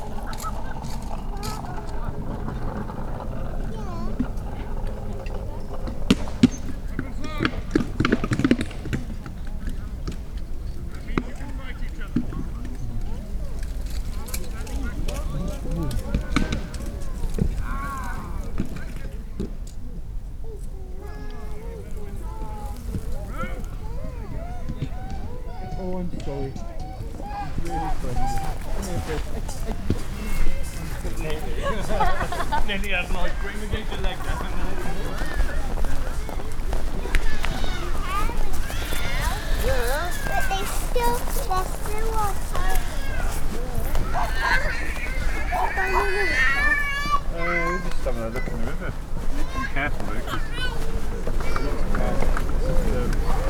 The Old Market, Hereford, UK - sequence
A sequence of sounds captured during a walk in the city centre of Hereford. I start in the Old Market, then to the High Road shopping area, through the Cathedral and finally Bishop's Mradow and King George V Playing fields.
MixPre 3 with 2 x Rode NT5s
West Midlands, England, United Kingdom, 1 April